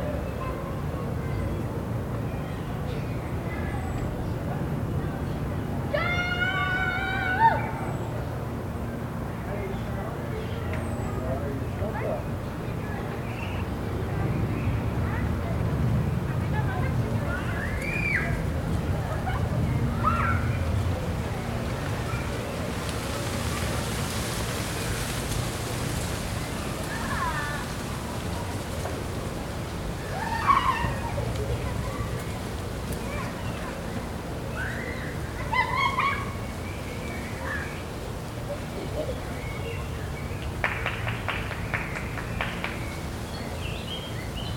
{"title": "Rue du Dix Avril, Toulouse, France - Jolimont 03", "date": "2022-04-10 10:25:00", "description": "ambience Parc\nCaptation : ZOOMH4n", "latitude": "43.61", "longitude": "1.46", "altitude": "194", "timezone": "Europe/Paris"}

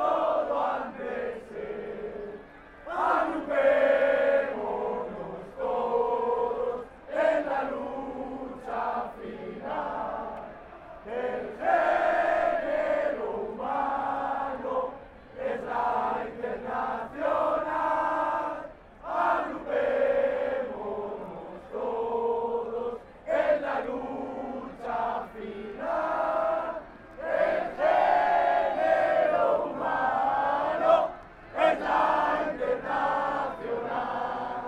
{"title": "El Pla del Remei, València, Valencia, España - 1 de Mayo", "date": "2015-05-01 14:02:00", "description": "1 de Mayor", "latitude": "39.47", "longitude": "-0.37", "altitude": "18", "timezone": "Europe/Madrid"}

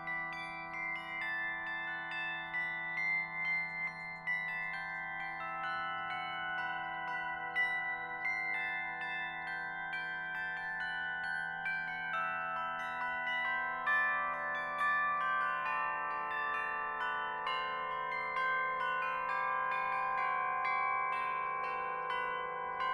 {"title": "Андропова пр-т, строение, Москва, Россия - Russian instrument Bilo", "date": "2014-06-22 16:36:00", "description": "Russian instrument \"Bilo\" (flat bells). The recording was made in the park \"Kolomenskoye\" on June 22, 2014.", "latitude": "55.67", "longitude": "37.67", "altitude": "142", "timezone": "Europe/Moscow"}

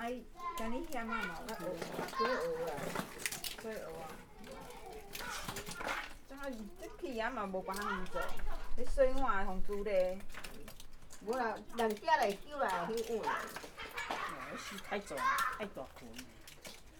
{"title": "芳苑鄉芳中村, Changhua County - Small village", "date": "2014-03-08 14:50:00", "description": "A group of old women are digging oysters, Children are playing\nZoom H6 MS +Rode NT4", "latitude": "23.93", "longitude": "120.32", "altitude": "7", "timezone": "Asia/Taipei"}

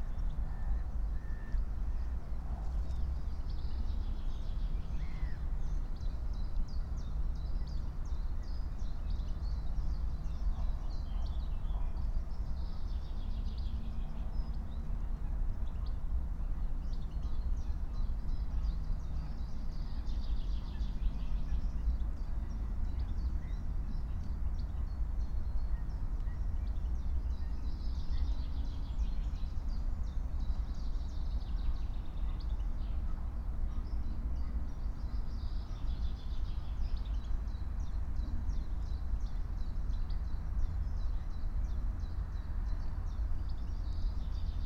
{"date": "2022-04-14 11:05:00", "description": "11:05 Berlin Buch, Lietzengraben - wetland ambience", "latitude": "52.64", "longitude": "13.46", "altitude": "49", "timezone": "Europe/Berlin"}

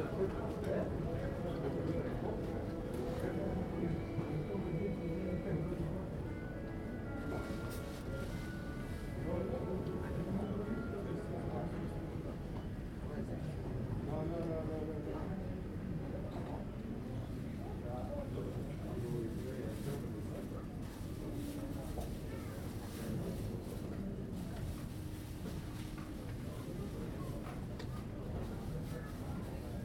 {"title": "Stockholm Arlanda International Airport / Terminal 2 - 3 - 4 - 5, 190 60 Stockholm-Arlanda, Sweden - Waiting for departure", "date": "2018-12-16 07:38:00", "description": "Waiting for my flight. Luggage noises, airport announcement in Swedish and English, people talking.\nRecorded with Zoom H2n, 2 channel stereo mode", "latitude": "59.65", "longitude": "17.93", "altitude": "34", "timezone": "Europe/Stockholm"}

